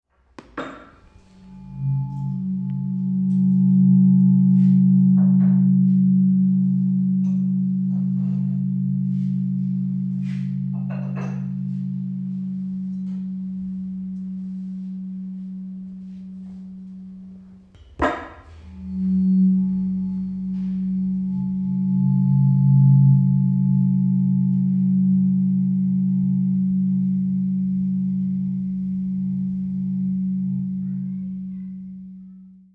18.11.2008 19:50
esoterische stimmgabeln, 136.1hz (om) 187.61hz (moon)... /
esoteric tunig forks with special frequencies
Berlin, Deutschland